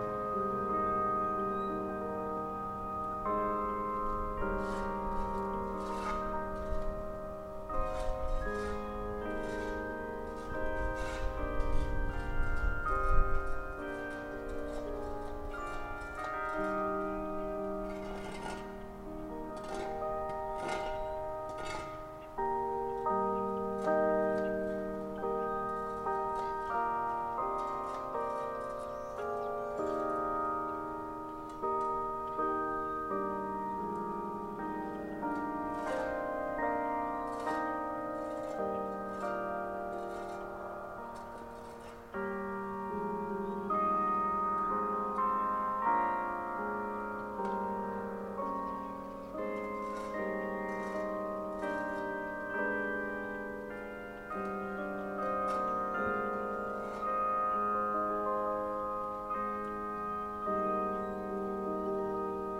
At Finch Park, near the tennis courts, the noon chimes are heard from about a block and a half away. A west wind continues to keep the air temperature relatively low on this early spring Sunday. The township's handyman, with a shovel, tidies up the edges of a sidewalk across the street. Stereo mic (Audio-Technica, AT-822), recorded via Sony MD (MZ-NF810, pre-amp) and Tascam DR-60DmkII.